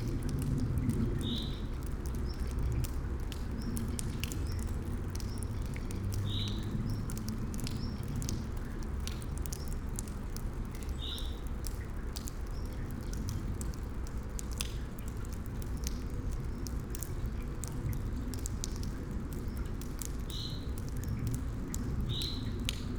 Muzej norosti, Museum des Wahnsinns, courtyard, Trate, Slovenia - rain, frontside